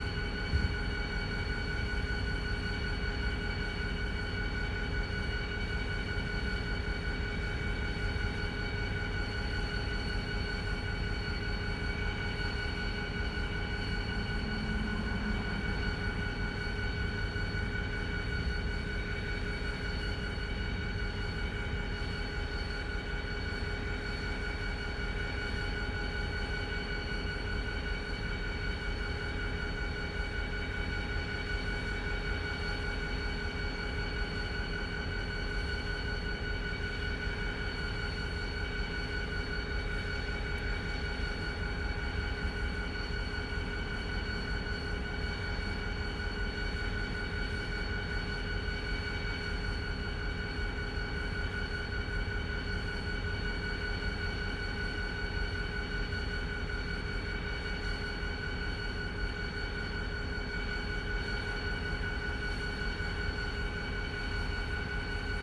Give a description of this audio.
Norfolk-Southern Engine #3471 at idle on the tracks in front of the train station in Gainesville, Ga